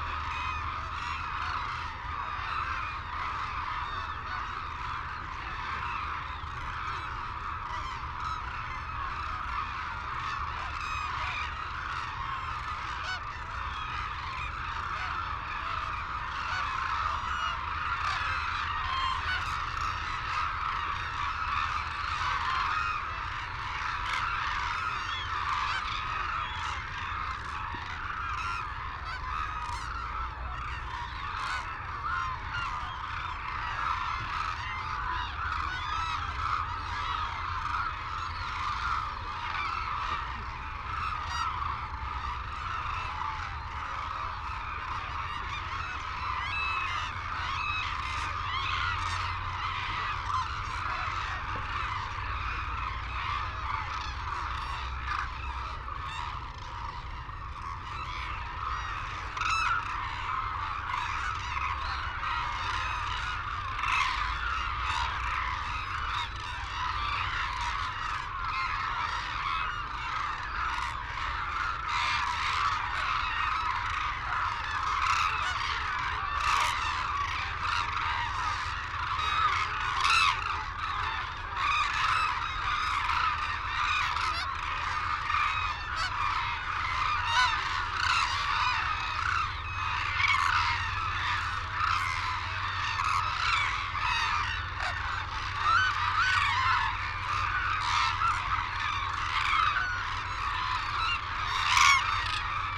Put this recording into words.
Arasaki Crane Centre ... Izumi ... calls and flight calls from white naped cranes and hooded cranes ... cold sunny windy ... background noise ... Telinga ProDAT 5 to Sony Minidisk ... wheezing whistles from young birds ...